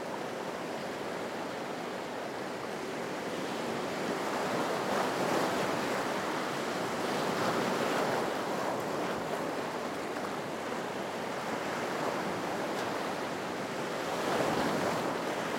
Rue Arlette Davids, Wissant, France - Wissant (Pas-de-Calais - côte d'Opale)
Wissant (Pas-de-Calais - côte d'Opale)
Belle journée ensoleillée
C'est marée descendante. La mer joue encore entre les rochers et les galets
ZOOM F3 + Neumann KM 184